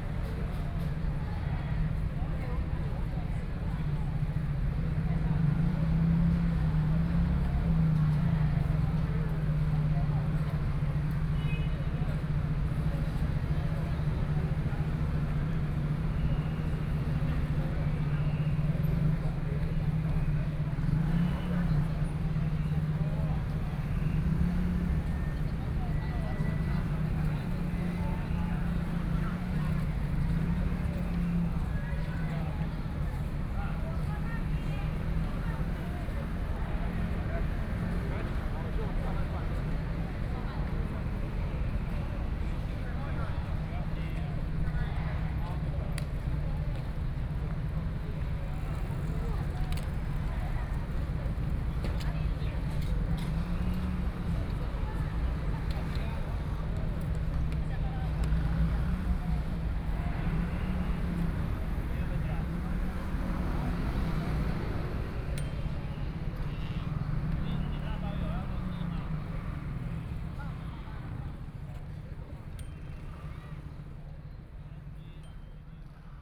Tamsui District, New Taipei City - Sitting in front of the square
Sitting in front of the square, The distance protests, Many tourists, Footsteps, Traffic Sound
Please turn up the volume a little. Binaural recordings, Sony PCM D100+ Soundman OKM II
Danshui District, New Taipei City, Taiwan, 5 April 2014